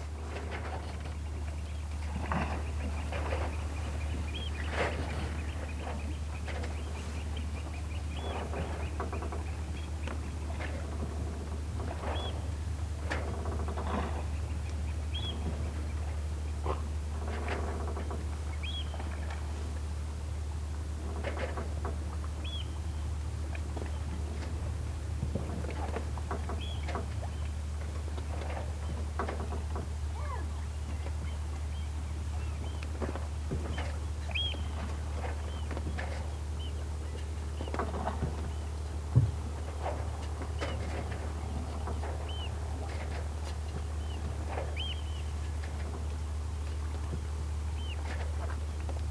Kildonan Bay, Isle of Eigg - Two Anchors & No Wind
Recorded with a pair of DPA 4060s, an Aquarian Audio H2a hydrophone and a Sound Devices MixPre-3
UK, 3 July 2019